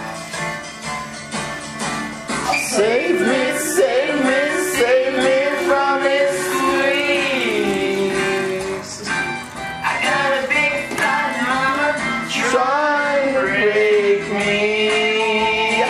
Sunny Afternoon, wiping the floor singing a good old song
What was left of the party? Cigarette butts, beer puddles, good mood and that certain melody...
28 September 2010, ~02:00